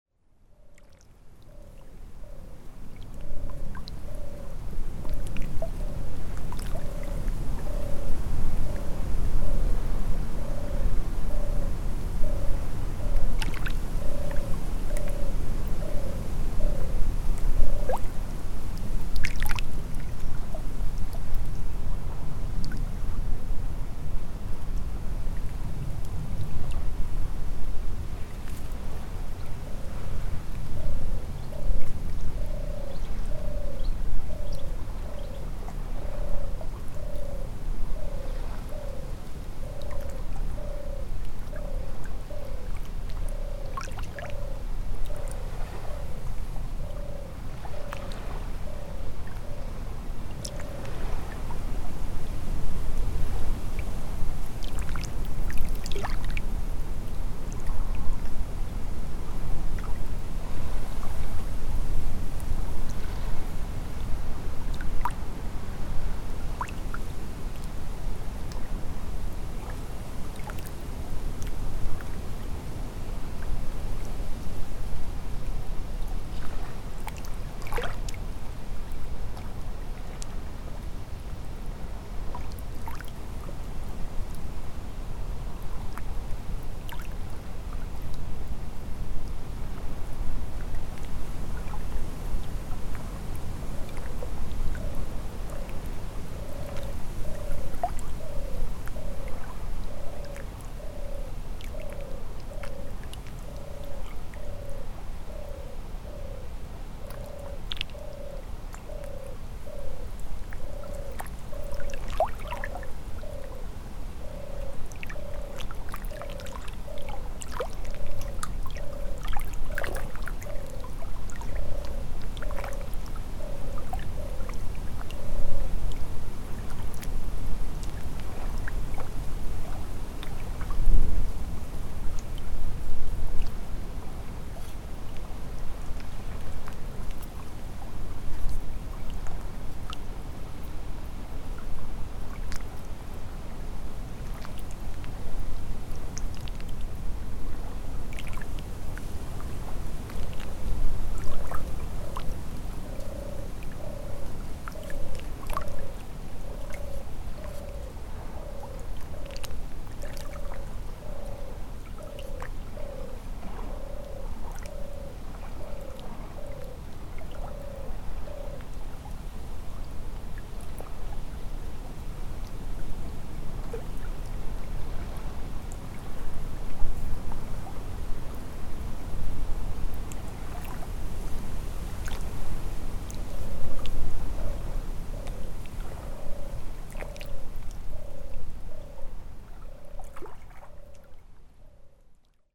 Saint-Samson-de-la-Roque, France - Risle river
The very very quiet Risle river. At the backyard, the bird is a european turtle dove.